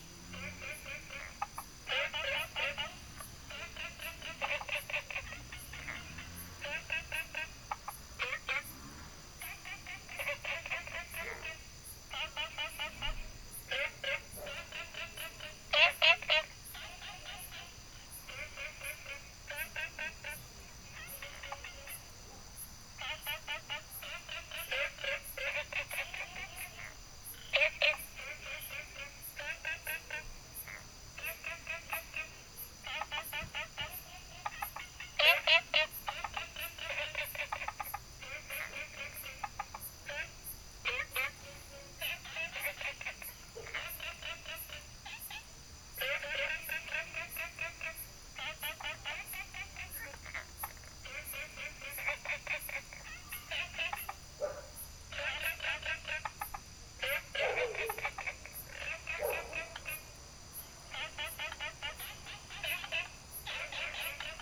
{"title": "Taomi Ln., Puli Township - Small ecological pool", "date": "2015-08-10 22:43:00", "description": "Frogs chirping, Insects called, Small ecological pool, Dogs barking", "latitude": "23.94", "longitude": "120.94", "altitude": "463", "timezone": "Asia/Taipei"}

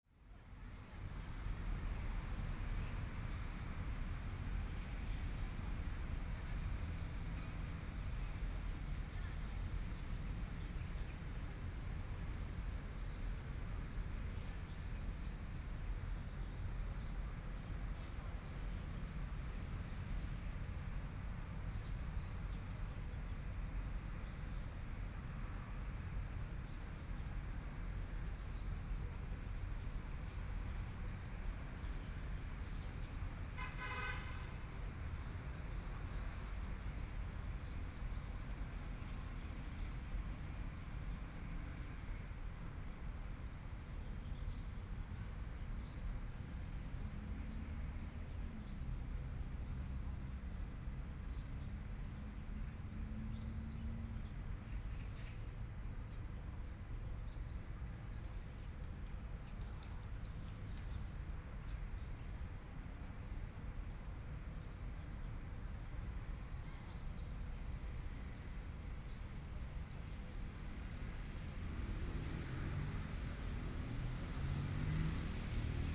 {"title": "Yuan Ze University, Taoyuan County - Environmental sounds", "date": "2013-12-09 15:58:00", "description": "Sitting under a tree, Environmental sounds, Traffic Sound, Binaural recording, Zoom H6+ Soundman OKM II", "latitude": "24.97", "longitude": "121.26", "altitude": "123", "timezone": "Asia/Taipei"}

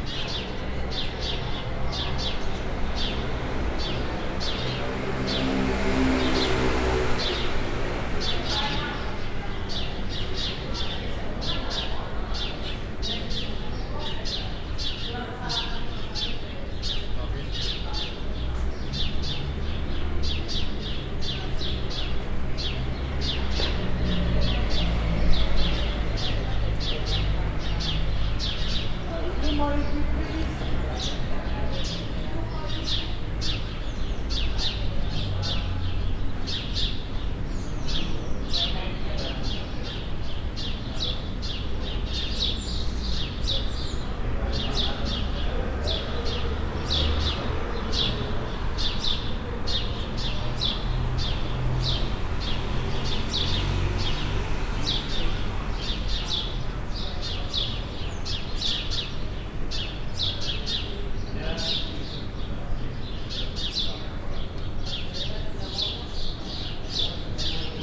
{"title": "Rue de Périgord, Toulouse, France - in the library courtyard", "date": "2022-06-11 14:32:00", "description": "atmosphere, bird, people walk, bells\nCaptation : ZoomH6", "latitude": "43.61", "longitude": "1.44", "altitude": "149", "timezone": "Europe/Paris"}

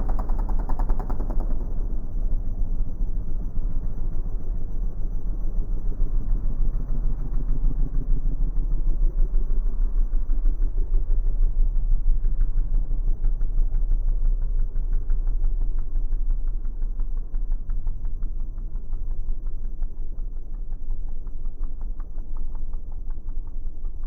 {"title": "Silverstone Circuit, Towcester, UK - 250cc mbikes slowed down ...", "date": "2017-08-25 13:45:00", "description": "British Motorcycle Grand Prix ... recorder has the options to scrub the speed of the track ... these are 250cc singles at 1/8x ...", "latitude": "52.07", "longitude": "-1.01", "altitude": "158", "timezone": "Europe/London"}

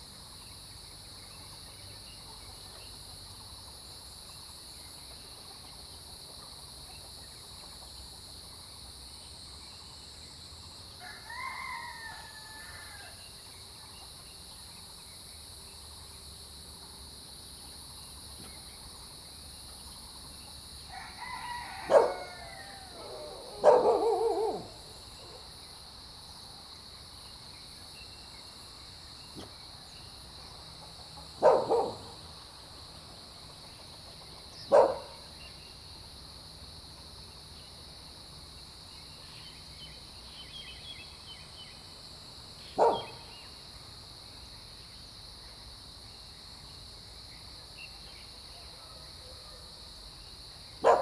{"title": "Shuishang Ln., Puli Township - A small village in the morning", "date": "2015-06-12 05:51:00", "description": "A small village in the morning, Bird calls, Crowing sounds, Dogs barking\nZoom H2n MS+XY", "latitude": "23.94", "longitude": "120.92", "altitude": "474", "timezone": "Asia/Taipei"}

